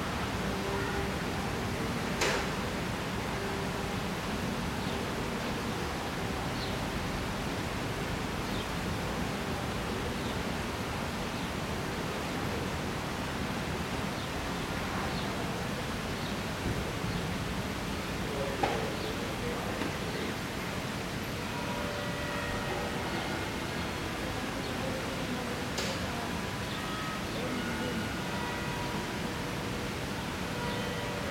Quiet Sunday morning in the pedestrian zone, shops are closed, some people passing by, a little traffic in a distance, birds (sparrows and gulls), distant church bells and 10 o'clock chimes of the town hall clock. Sony PCM-A10 recorder with xy microphone and furry windjammer.
Schleswig-Holstein, Deutschland, 30 May 2021